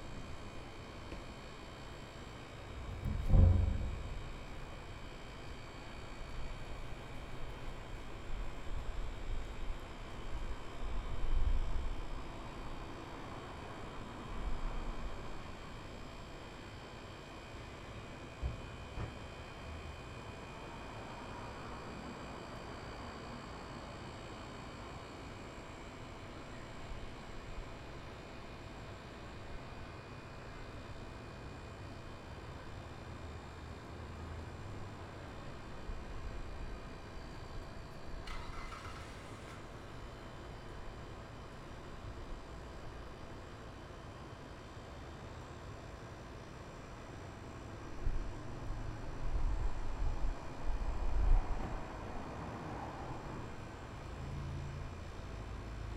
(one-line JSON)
{"title": "N Tejon St, Colorado Springs, CO, USA - Outdoor Patio of Wooglins Deli", "date": "2018-03-10 16:36:00", "description": "Recorded in the patio area of Wooglin's Deli on a slightly windy day. The mechanical buzz of appliances, a car starting, and light traffic can be heard.", "latitude": "38.85", "longitude": "-104.82", "altitude": "1844", "timezone": "America/Denver"}